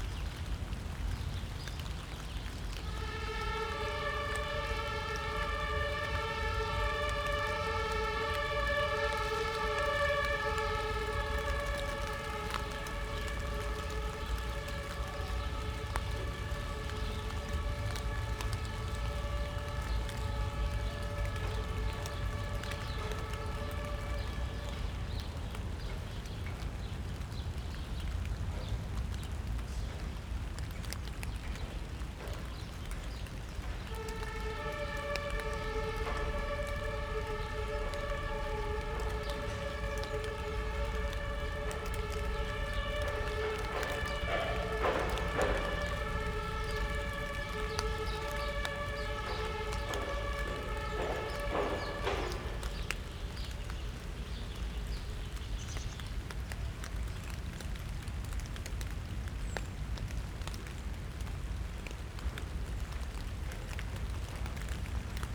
Jakobikirchstraße, Berlin, Germany - Behind the church surrounded by sirens in spattering rain
The rain spatters on thick autumn leaves where I stand and on my coat. Occasionally other leaves fall in ones and twos. There are not so many left on the trees now. A close ambulance or fire truck sounds its siren loudly to the traffic. Road works are clogging up the flow here and it has trouble getting through. The siren echos from the buildings differently as it changes position. The sound seems to encircle me but the complex acoustics and sonic channels of this area make it impossible to know where it really is.